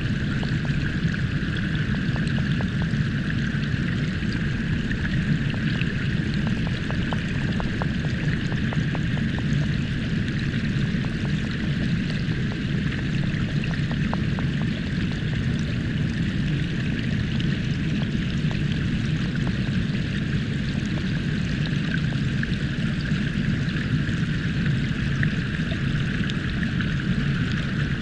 Kuldiga, waterfall through hydrophone
Early morning, the best time to visit the place! hydrophones in Venta's waterfall